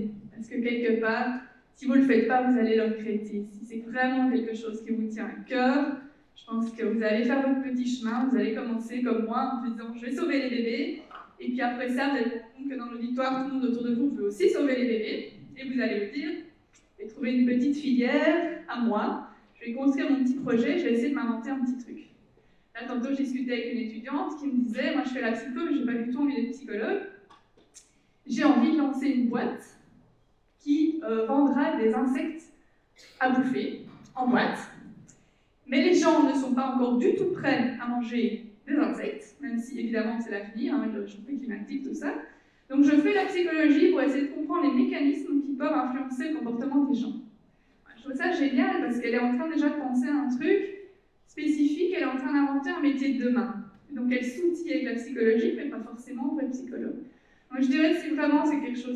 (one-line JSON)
{"title": "Centre, Ottignies-Louvain-la-Neuve, Belgique - Psychology course", "date": "2016-03-23 11:15:00", "description": "In the huge Socrate auditoire 41, a course of psychology, with to professors talking. Audience is dissipated.", "latitude": "50.67", "longitude": "4.61", "altitude": "117", "timezone": "Europe/Brussels"}